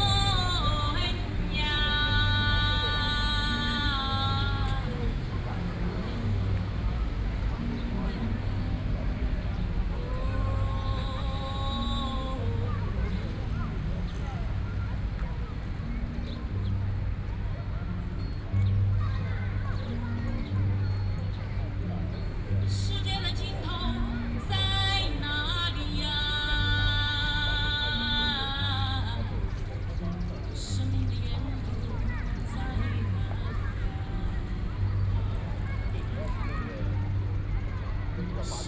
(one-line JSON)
{"title": "Liberty Square, Taipei - Hakka singer", "date": "2013-05-17 18:35:00", "description": "Opposed to nuclear power plant construction, Hakka song performances, Binaural recordings, Sony PCM D50 + Soundman OKM II", "latitude": "25.04", "longitude": "121.52", "altitude": "8", "timezone": "Asia/Taipei"}